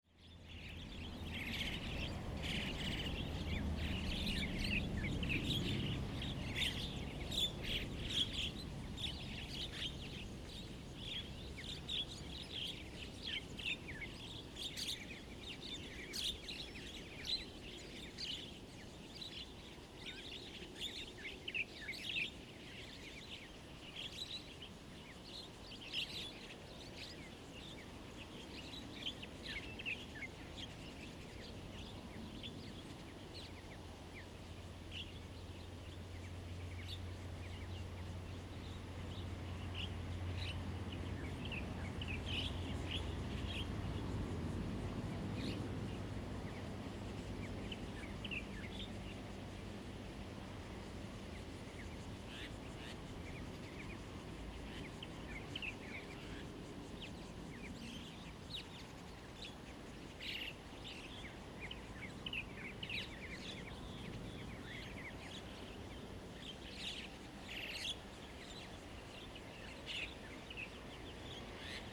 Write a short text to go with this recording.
Birdsong, Traffic Sound, Evening farmland, Zoom H2n MS+XY